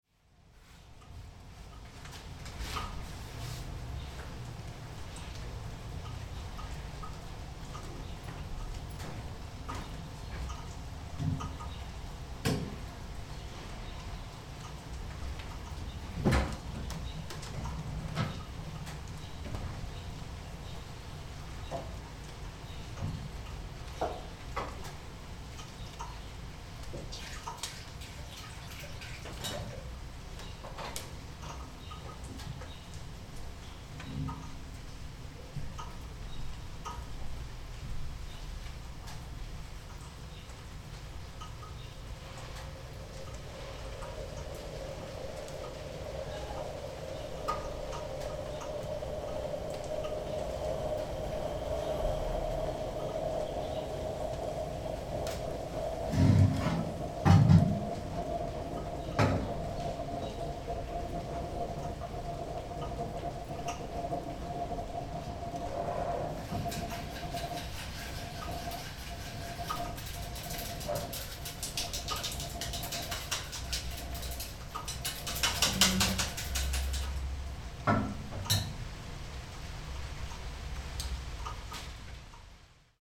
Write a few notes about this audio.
4.7.2008, 9:45, rainy morning, kitchen work, window open, raindrops on scaffold, coffee ready.